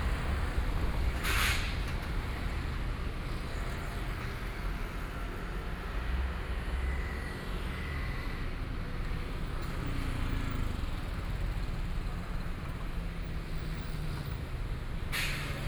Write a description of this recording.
Walking on abandoned railroad tracks, Currently pedestrian trails, Dogs barking, Garbage truck music, Bicycle Sound, People walking, Binaural recordings, Zoom H4n+ Soundman OKM II ( SoundMap2014016 -22)